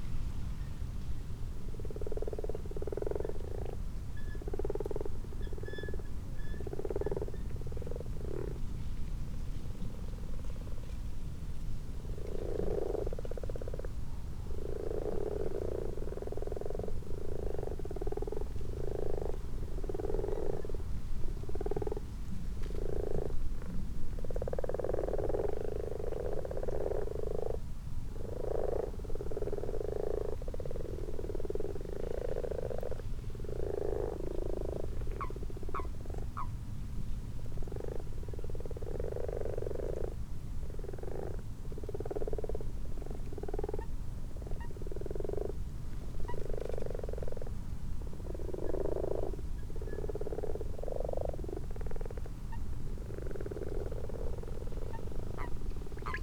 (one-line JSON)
{"title": "Malton, UK - frogs and toads ...", "date": "2022-03-13 00:15:00", "description": "common frogs and toads in a garden pond ... xlr sass on tripod to zoom h5 ... time edited unattended extended recording ... background noise from a cistern filling up ..?", "latitude": "54.12", "longitude": "-0.54", "altitude": "77", "timezone": "Europe/London"}